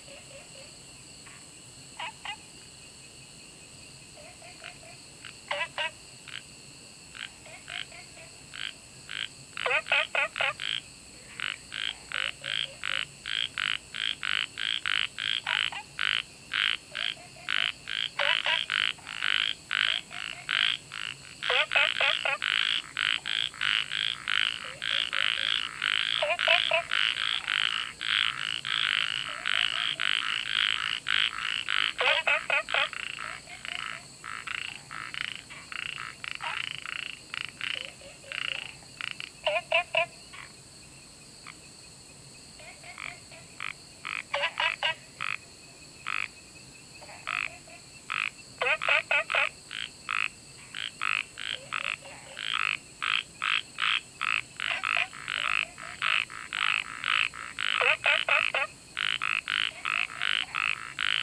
桃米巷, 南投縣埔里鎮桃米里 - Frogs chirping
Sound of insects, Frogs chirping
Zoom H2n MS+XY